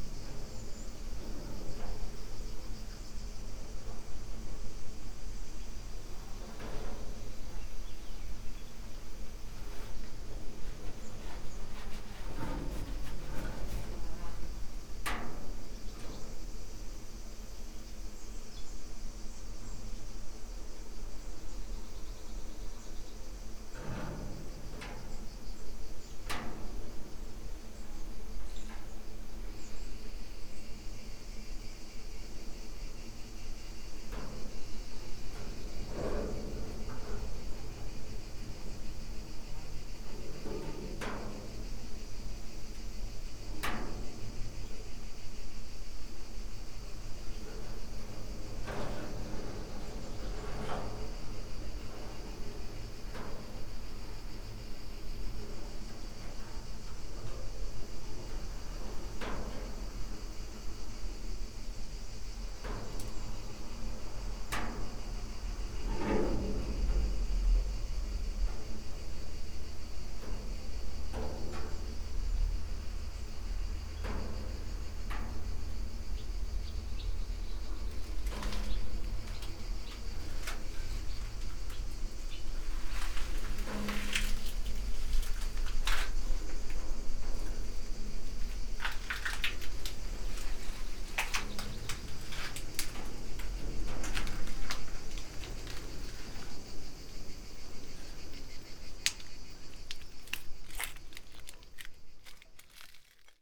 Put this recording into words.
tree branches on the top of metal shed, moved by wind, dry leaves inside, steps, distant thunder, cicadas ...